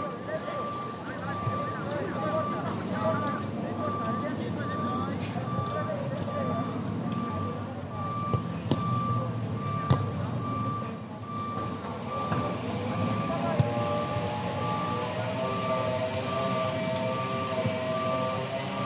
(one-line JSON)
{"title": "Br. Nueva Tibabuyes-KR 121C - CL 129D, Bogotá, Colombia - Barrio Nueva Tibabuyes", "date": "2021-11-25 08:00:00", "description": "Paisaje sonoro horas de la mañana (8:00am)", "latitude": "4.73", "longitude": "-74.11", "altitude": "2550", "timezone": "America/Bogota"}